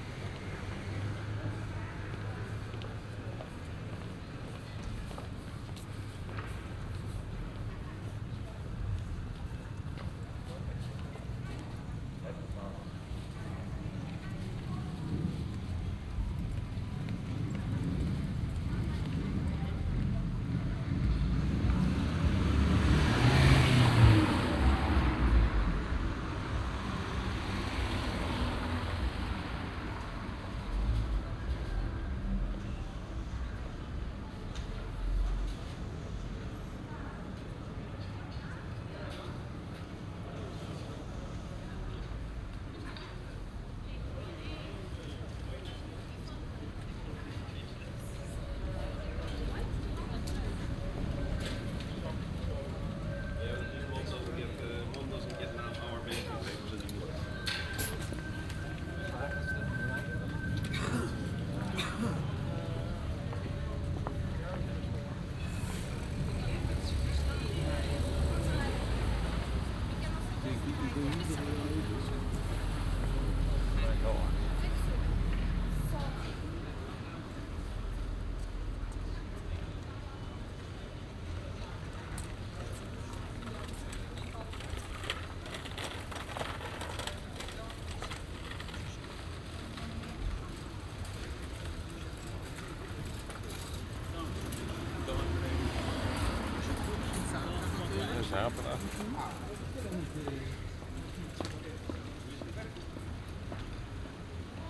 Chinatown, Den Haag, Nederland - Gedempte Gracht
Binaural recording on a busy Saturday afternoon.
Zoom H2 with Sound Professionals SP-TFB-2 binaural microphones.
Den Haag, Netherlands, 27 February 2016, 14:30